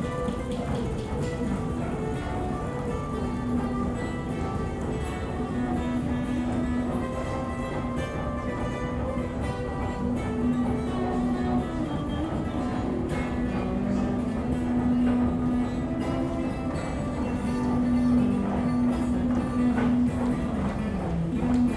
Praha Zelivskeho metro station
musician playing along he rythms of the escalator, at zelivskeho metro station
23 June 2011